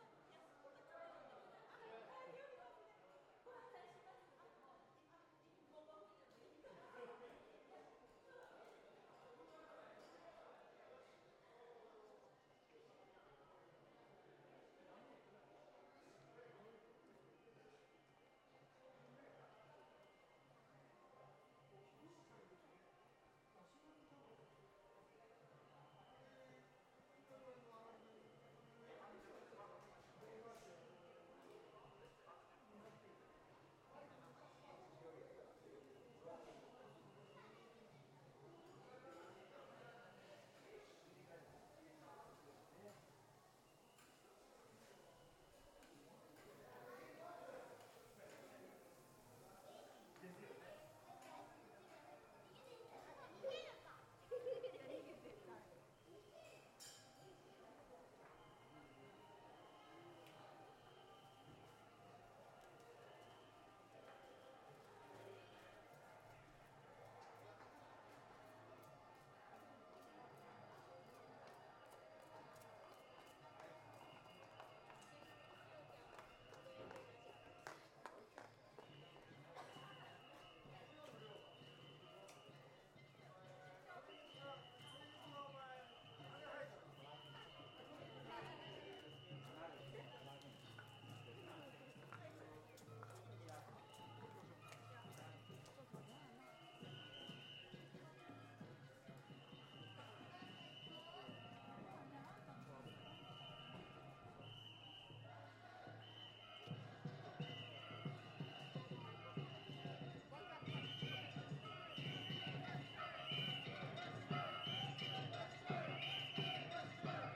Itamachi, Tagawa, Fukuoka, Japan - School Children Playing Bamboo Flutes
Walking from the covered shopping arcade into the festival and back again.